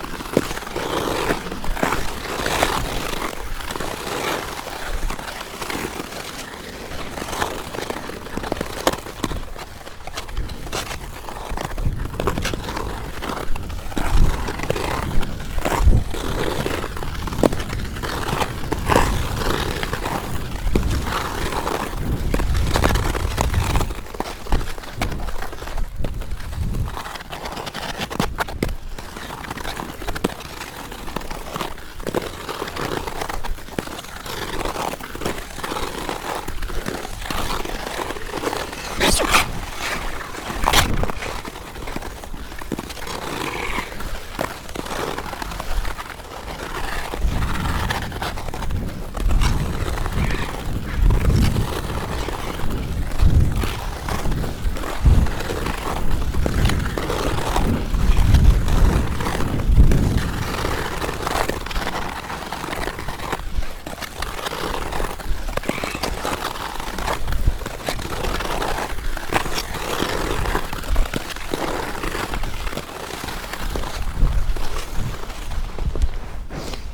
Olsztyn, Polska - Ice skating (1)
Ice skating with lavalier mics inside gloves. Zoom H4n.
Olsztyn, Poland